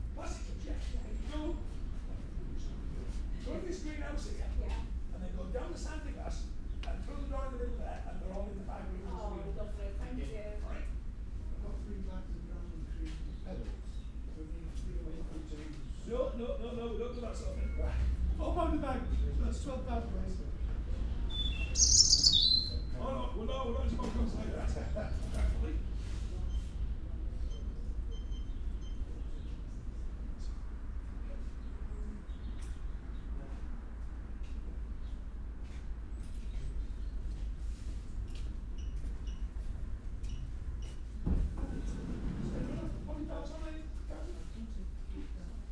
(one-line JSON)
{"title": "Reighton Nursery, Hunmanby Rd, Filey, United Kingdom - resident robin singing ...", "date": "2019-03-15 11:25:00", "description": "resident robin singing ... Reighton Nurseries ... the birds is resident and sings in the enclosed area by the tills ... it is not the only one ... lavalier mics clipped to bag ... background noise and voices ... the bird can negotiate the sliding doors ...", "latitude": "54.16", "longitude": "-0.28", "altitude": "110", "timezone": "GMT+1"}